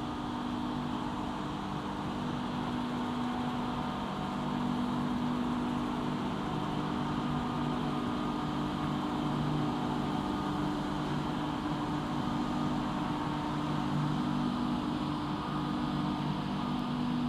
Alyth - Bonnybrook - Manchester, Calgary, AB, Canada - Alberta Distillery